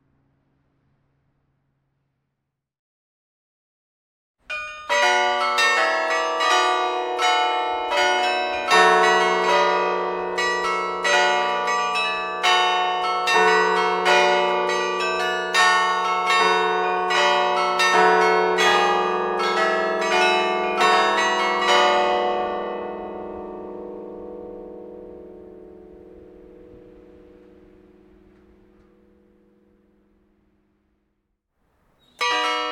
Carillon de la collégiale église St Piat - Seclin (Nord)
Ritournelles automatisées
France métropolitaine, France